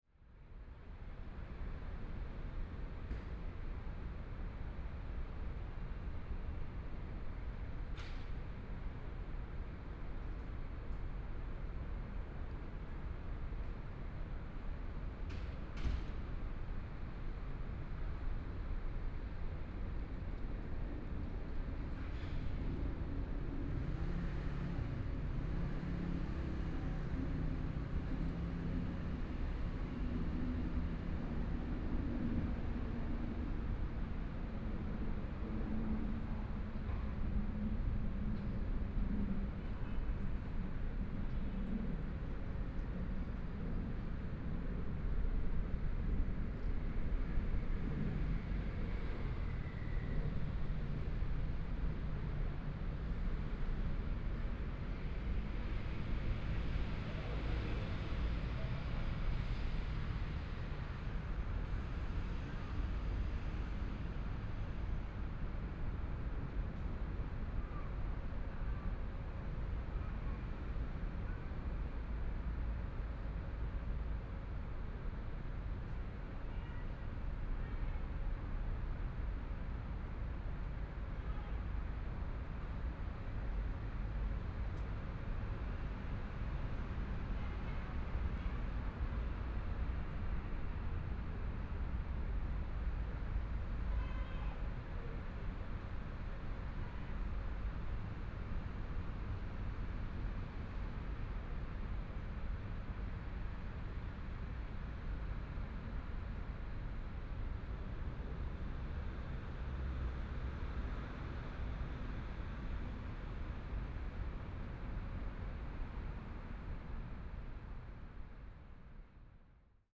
YongJing Park, Taipei City - The park at night
The park at night, Traffic Sound, the sound of Aircraft flying through
Please turn up the volume a little. Binaural recordings, Sony PCM D100+ Soundman OKM II
Zhongshan District, Taipei City, Taiwan, 15 April 2014